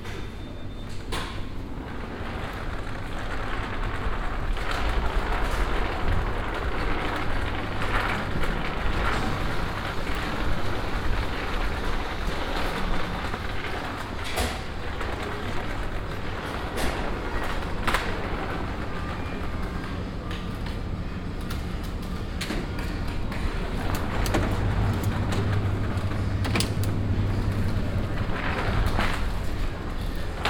{
  "title": "marnach, shopping center",
  "date": "2011-09-17 17:37:00",
  "description": "Inside a shopping center. The opening of the automatic door, a child on an electric toy, the beeping of the counter, the sound of a paper bag, the opening and closing of a bread box, some background radio music, pneumatic air, the hum of the ventilation, the rolling of a plastic shopping cart, a french announcement, the electric buzz of the ice fridges, steps on stone floor.\nMarnach, Einkaufszentrum\nIn einem Einkaufszentrum. Das Öffnen der automatischen Tür, ein Kind auf einem elektrischen Spielzeug, das Piepsen der Schalter, das Geräusch von einer Papiertüte, das Öffnen und Schließen einer Brotdose, etwas Radiomusik im Hintergrund, Druckluft, das Brummen der Lüftung, das Rollen von einem Einkaufswagen aus Plastik, eine französische Durchsage, das elektrische Summen der Eisschränke, Schritte auf dem Steinboden.\nMarnach, centre commercial\nA l’intérieur d’un centre commercial.",
  "latitude": "50.05",
  "longitude": "6.07",
  "altitude": "506",
  "timezone": "Europe/Luxembourg"
}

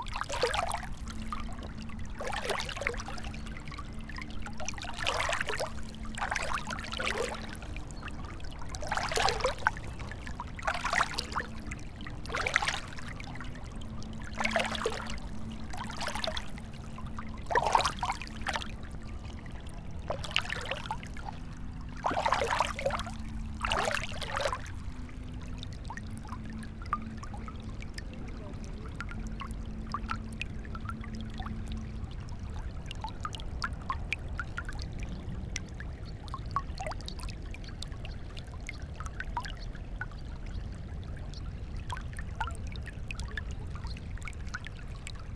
{
  "title": "river drava, dvorjane - stones, river, walking",
  "date": "2015-08-09 19:16:00",
  "latitude": "46.48",
  "longitude": "15.77",
  "timezone": "Europe/Ljubljana"
}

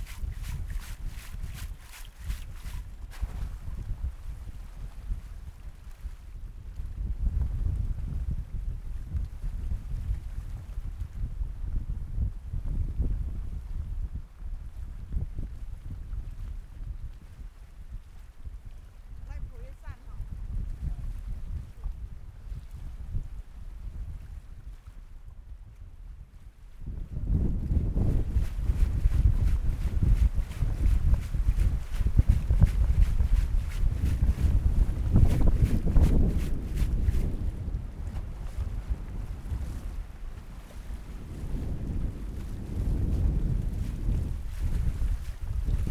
{
  "title": "Changhua County, Taiwan - at sea",
  "date": "2014-03-09 09:46:00",
  "description": "Cold strong wind, The sound of the wind, Women working at sea, Oyster\nZoom H6 MS",
  "latitude": "23.93",
  "longitude": "120.28",
  "timezone": "Asia/Taipei"
}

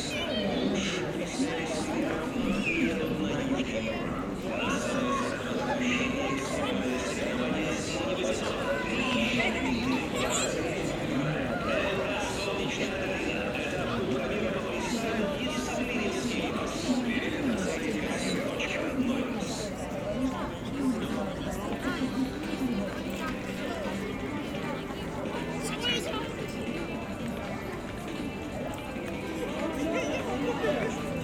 {
  "title": "ул. Кирова, Челябинск, Челябинская обл., Россия - walking people, children, sound advertising, laughter, scattering of small coins.",
  "date": "2020-02-22 21:20:00",
  "description": "One of the main walking roads of Chelyabinsk. People relax and go to the cafe. Lots of sculptures.",
  "latitude": "55.16",
  "longitude": "61.40",
  "altitude": "220",
  "timezone": "Asia/Yekaterinburg"
}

